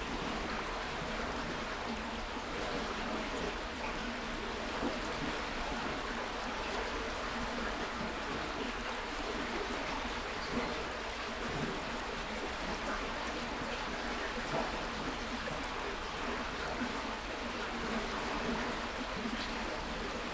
Berlin Karow, Panke river, water flow at/under bridge
(Sony PCM D50, DPA4060)
Berlin, Germany, 2 February 2019, ~15:00